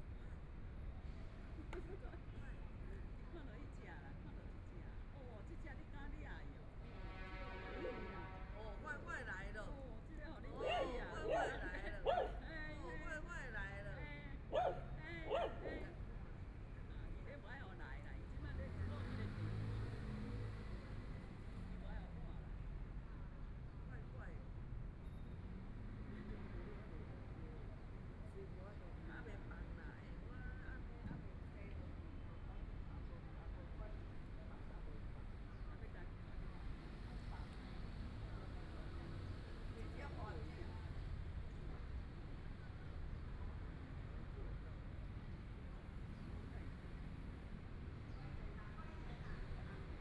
ZhongJi Park, Taipei City - Afternoon sitting in the park
Afternoon sitting in the park, Traffic Sound, Sunny weather
Binaural recordings, Please turn up the volume a little
Zoom H4n+ Soundman OKM II